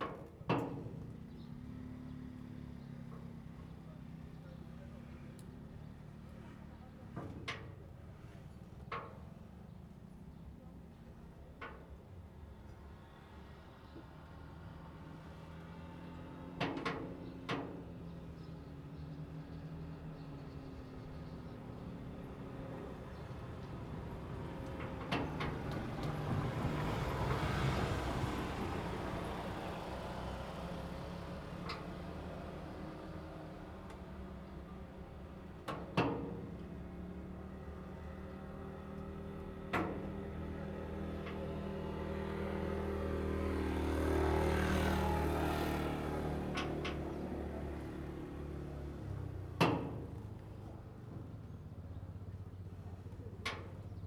岐頭碼頭, Baisha Township - In the dock
In the dock
Zoom H2n MS+XY
Penghu County, Baisha Township, 22 October 2014, 10:26